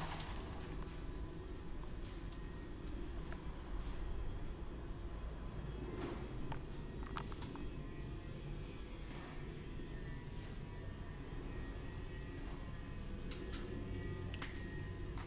cologne, thürmchenswall, rubble slide
stones sliding down a rubble slide
soundmap nrw: social ambiences/ listen to the people in & outdoor topographic field recordings
4 July 2009